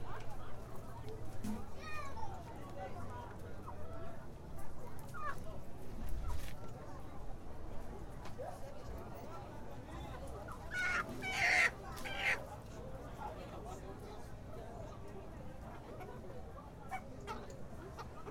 Trabalho Realizado para a disciplina de Sonorização I- Marina Mapurunga- UFRB
Liz Riscado